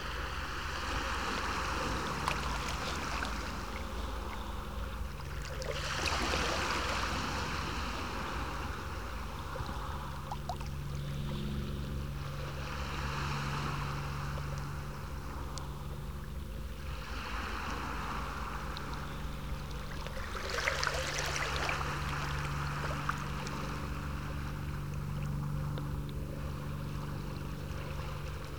Baltic Sea, Nordstrand Dranske, Rügen - Stereoscopic Aircraft
Propellerplane above the se, along the coast, recorded with SASS and 1 JrF Hydrophone
13 August 2021, 17:00